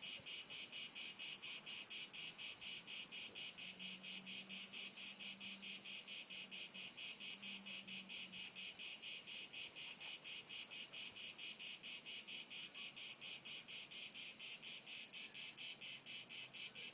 Gravedona Provinz Como, Italien - Zikadengesang